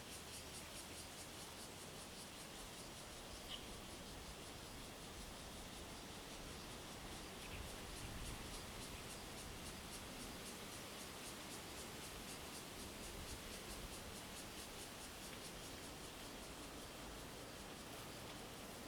Rueisuei Township, Hualien County - Birds singing
Traffic Sound, Birds singing
Zoom H2n MS+XY